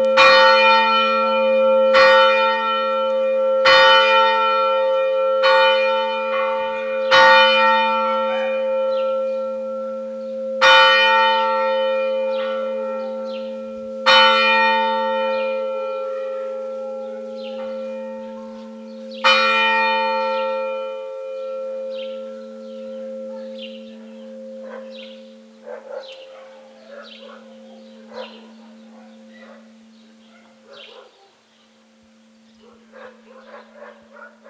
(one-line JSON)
{"title": "Croatia, Istria, dogs terrorised by the church bell sound - sound attack", "date": "2009-07-08 15:36:00", "description": "children playing, dogs kept in cages near the church bell tower start barking a few seconds BEFORE the torturing sound beggins(many times per day, every day);loud sound of the bell, squeaking of dogs; we started recording the children, the rest startled us.", "latitude": "45.36", "longitude": "13.93", "timezone": "Europe/Zagreb"}